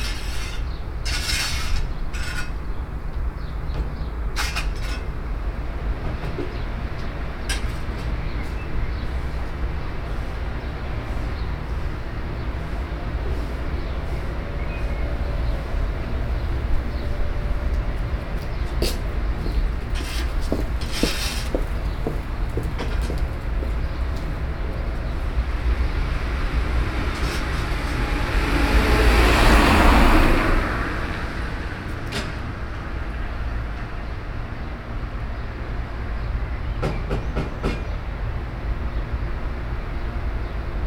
Diegem, Stationsstraat - Abribus / Bus Stop.